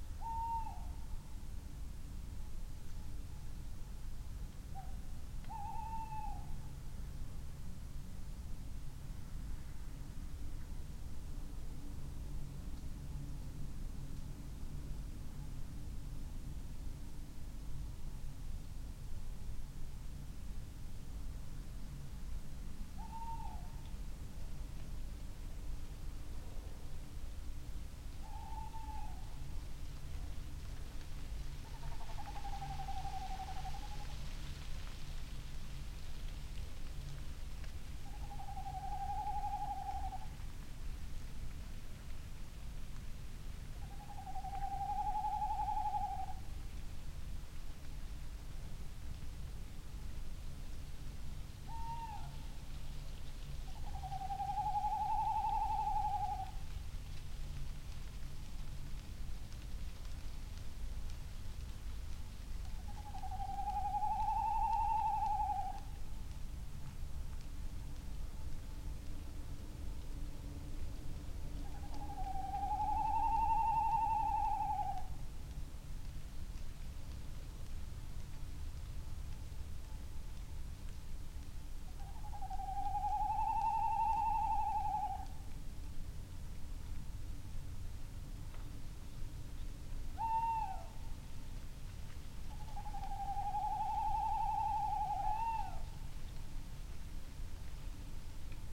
Unnamed Road, Malton, UK - tawny owl calls ...
tawny owl calls ... SASS on tripod ... bird calls ... little owl ... back ground noise ... rustling leaves ... taken from extended recording ...
20 September 2019, ~02:00